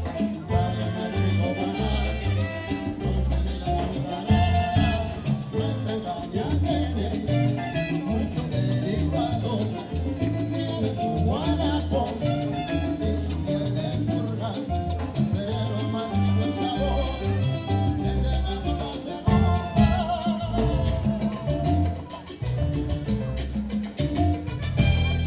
neoscenes: Mi Tierra salsa caliente